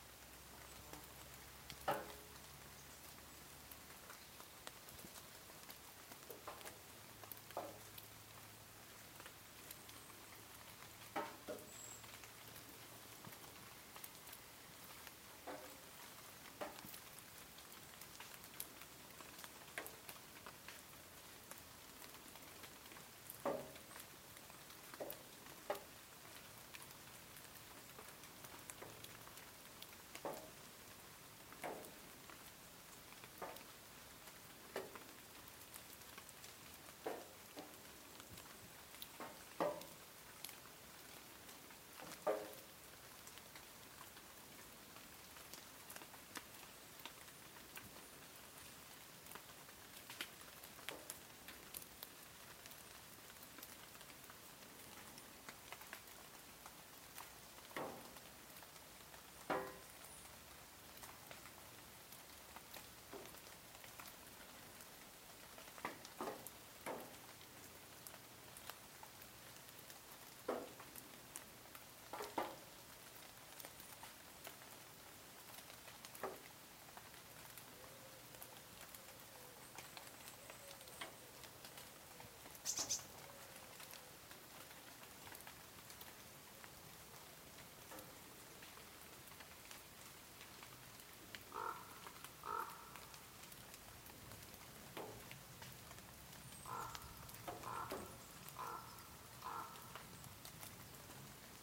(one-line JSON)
{"title": "rain on roof and trees - rain on tin roof and trees", "description": "light summer rain, tin roof, trees.\nstafsäter recordings.\nrecorded july, 2008.", "latitude": "58.31", "longitude": "15.67", "altitude": "115", "timezone": "GMT+1"}